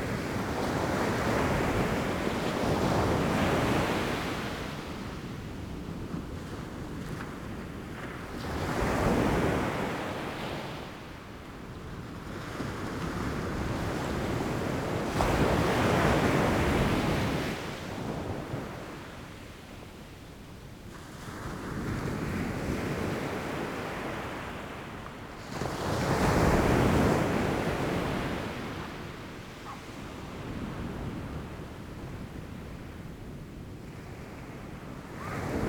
Soirée. Vagues calmes pendant la marée haute. Micros à même le sable.
Evening. Peacefull waves during the high tide. Closer.
April 2019.